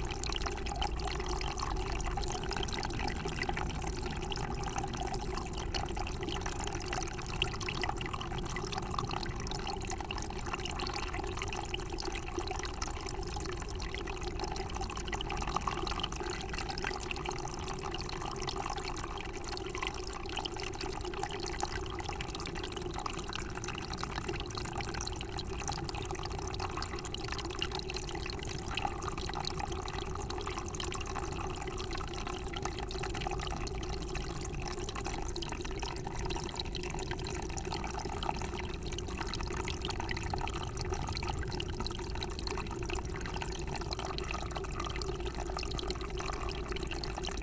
Source-Seine, France - Seine spring
The Seine river is 777,6 km long. This is here the sound of the countless streamlets which nourish the river.